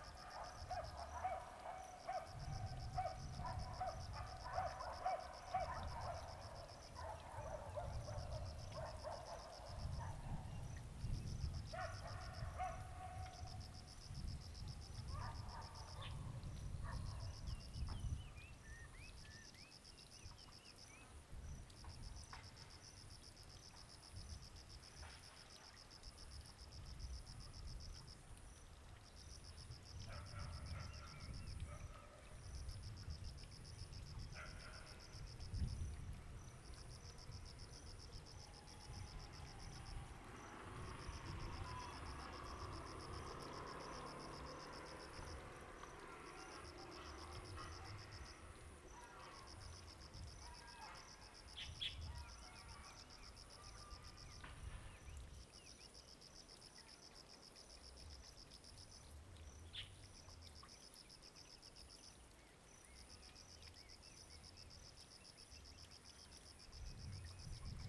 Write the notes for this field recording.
In the valley area, Bird call, Dog barking, traffic sound, Zoom H2n MS+XY